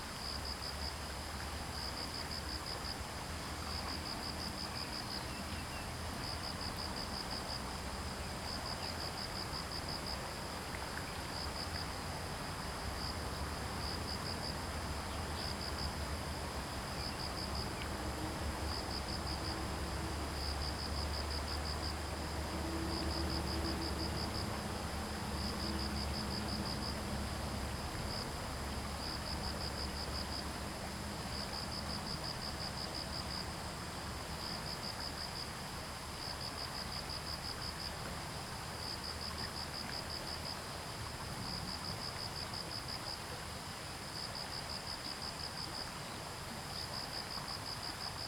桃米溪, 埔里鎮桃米里 - streams and Insect sounds
sound of water streams, Insect sounds
Zoom H2n MS+XY